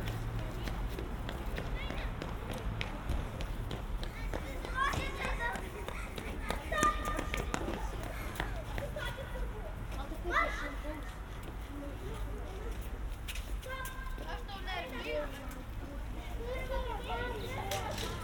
Utena, Lithuania, kids playground
At kids playground. Recorded with sennheiser ambeo headset
Utenos apskritis, Lietuva, 28 April 2021